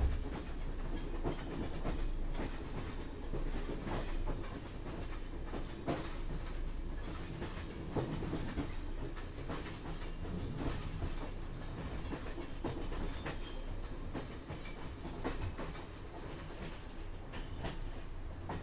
Sofia, Bulgaria - Train sounds
Train leavung from Sofia to the seaside. recorded with zoom h1
26 August 2016